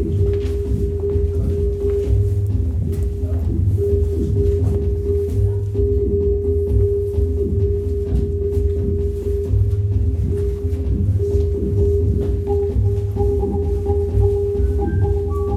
berlin, paul-lincke-ufer: hardwax - the city, the country & me: hardwax record store
the city, the country & me: march 10, 2011
Berlin, Germany, 10 March 2011